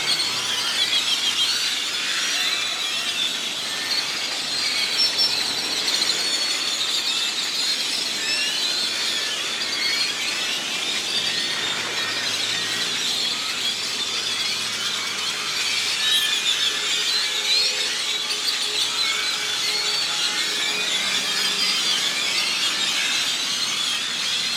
Parque España, San José, Costa Rica - Birds at dusk
A large tree full of birds. Not sure what species. Zoom H2 with highpass filter post processing.